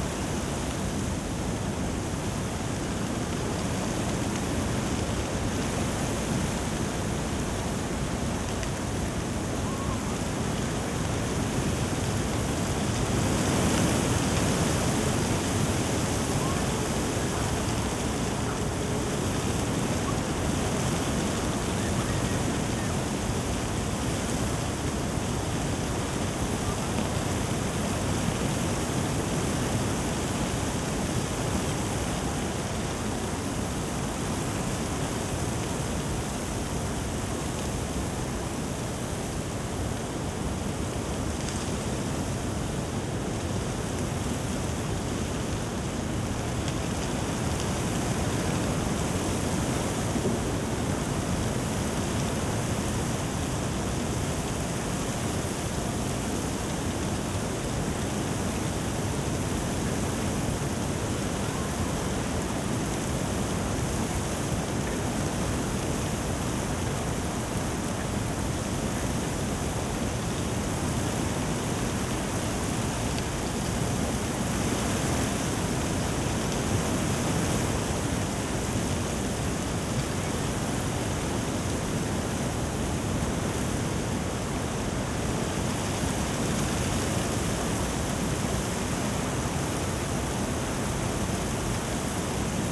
Tempelhofer Feld, Berlin, Deutschland - snow storm
heavy snow storm over Berlin today, the sound of wind and snow on dry leaves.
(SD702, AT BP4025)